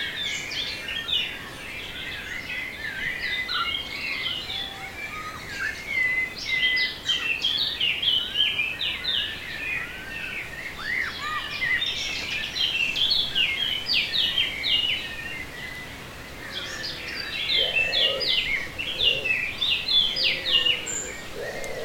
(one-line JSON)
{"title": "Canada Das Chicharas, Portugal - Canada das Chicharas", "date": "2022-08-05 15:10:00", "latitude": "39.03", "longitude": "-28.00", "altitude": "96", "timezone": "Atlantic/Azores"}